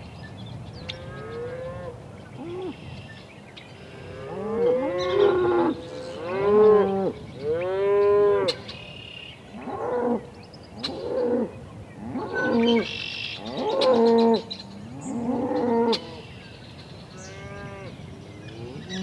Nebraska, USA - End of the afternoon in a field, in Nebraska
Cows and bulls calling and mooing, bird singing, the end of the afternoon in the countryside... Recorded around a pound in the countryside of Nebraska (USA), at the end of the day. Sound recorded by a MS setup Schoeps CCM41+CCM8 Sound Devices 788T recorder with CL8 MS is encoded in STEREO Left-Right recorded in may 2013 in Nebraska, USA.
19 May 2013